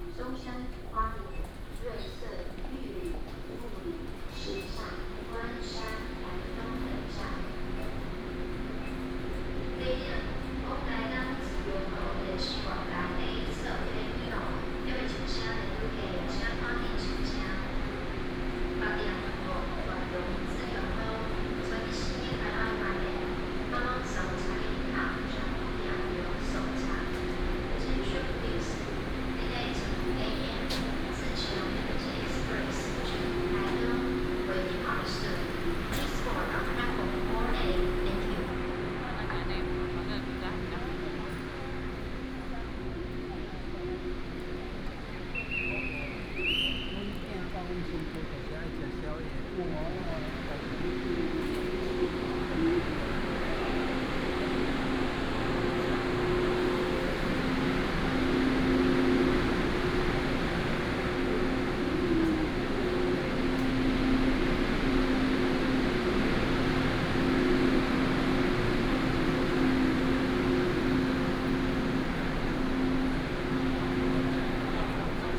Taipei Main Station, Taiwan - walking in the station
in the station platform, The train travels, walking in the station
Zhongzheng District, Taipei City, Taiwan